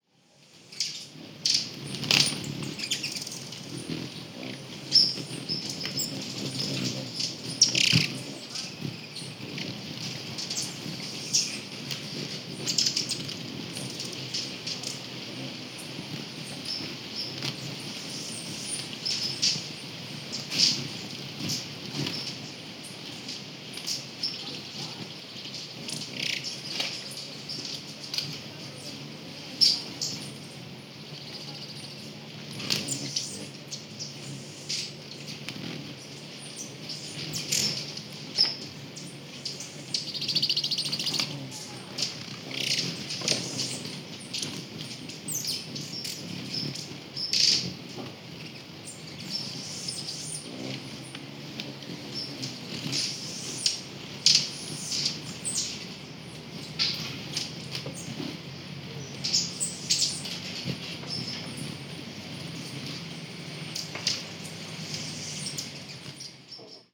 Hummingbirds clustering around feeder at Café Colibri near entrance to Monteverde Cloud Forest Reserve. A quadraphonic recording mixed binaurally. Zoom H2 placed very close to feeder.